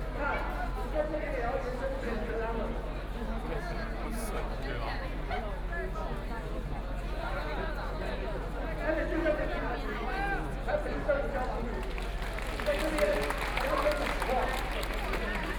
Zhenjiang St., Taipei City - Protest
Protest, University students gathered to protest the government
Binaural recordings